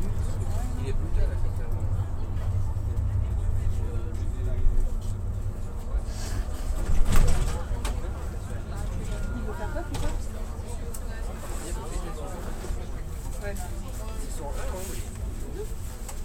Agn s at work Albert Michalon RadioFreeRobots
La Tronche, France